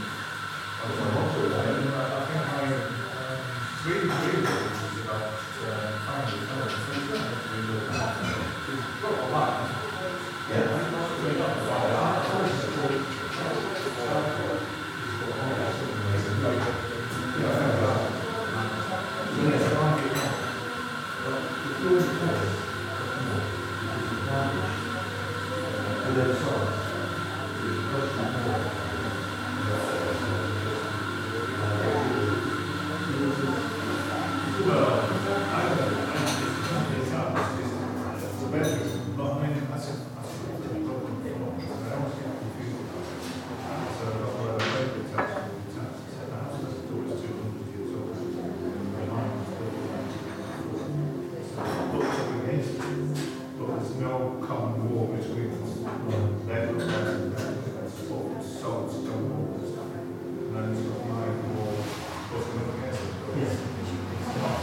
white stones cafe - White Stones Cafe - retracing Joe's footsteps around Portland
This was a destination I especially wanted to visit because fellow aporee comrade Joe Stevens made a recording here. I have a personal project that involves recording the sounds of Portland and I sought some guidance in this matter within Joe's catalogue of aporee uploads. I have added in some places of my own on this trip, but Joe's recordings have been a kind of compass, a starting point from which to enter into the sonic textures of the island. Joe was known to many in our community and sadly passed away last year... I like remembering him in the places where he went to make recordings and sitting in the same places where he went. I like to think that he also sat and drank coffee and listened to the tinny little speakers, the boomy acoustics, the traffic outside, the milk frother hissing, the change in the till at White Stones Cafe.